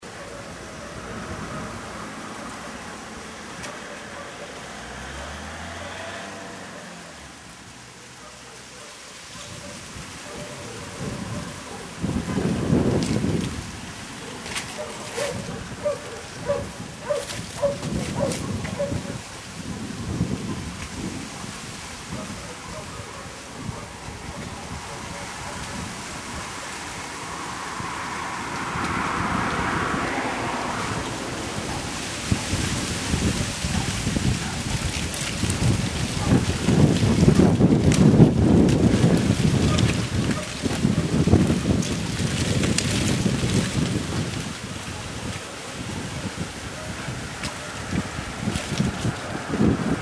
Autumn Bakio
Warm south wind in the trees and leaves running through the streets